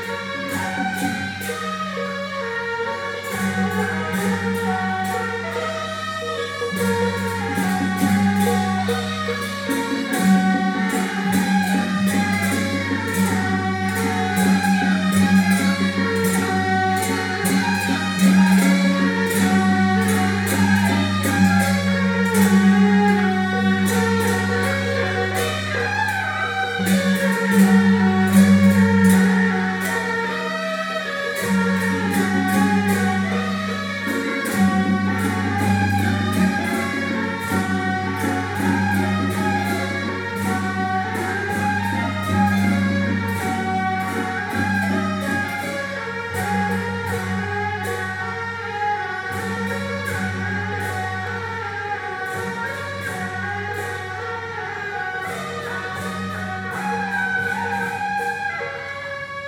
Traditional festival parade
Zoom H2n MS+XY